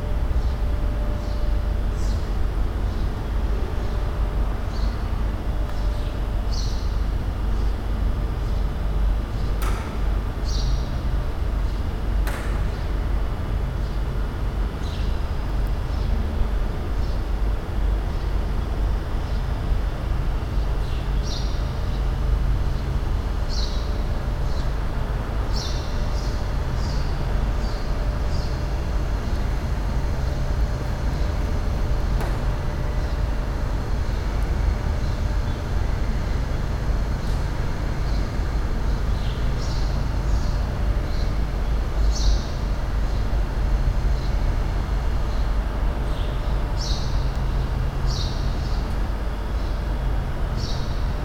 Barreiro, Portugal - Abandoned Train Station
Abandoned Train Station, large reverberant space, people passing, birds, recorded with church-audio binaurals+ zoom H4n